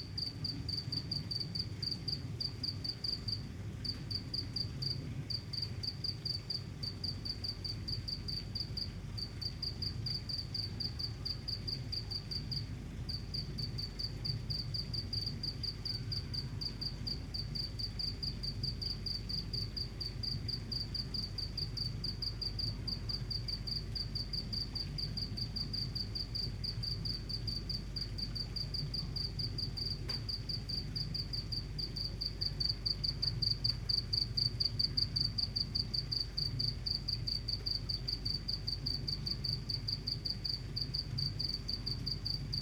small path, called "Sigge Gass", a cricket at night, at the edge of the butchery
(Sony PCM D50, Primo EM172)